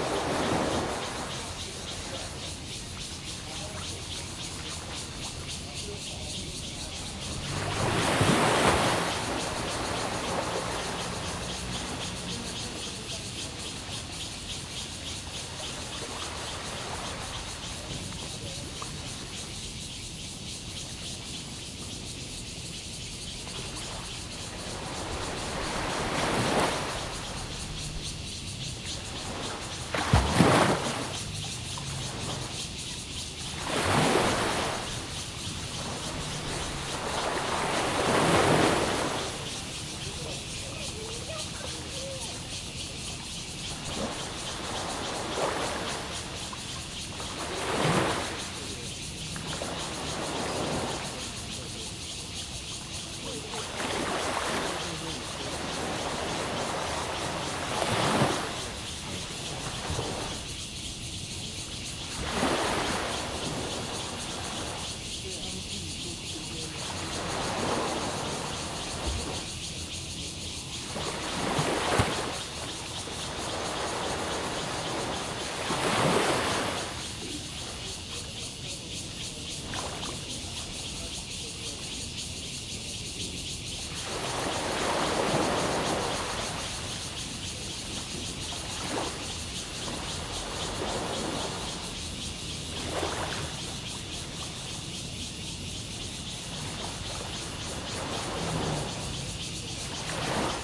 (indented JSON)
{
  "title": "淡水榕堤, Tamsui District, New Taipei City - On the river bank",
  "date": "2015-08-07 18:16:00",
  "description": "Before typhoon, Sound tide, Cicadas cry\nZoom H2n MS+XY",
  "latitude": "25.17",
  "longitude": "121.44",
  "altitude": "7",
  "timezone": "Asia/Taipei"
}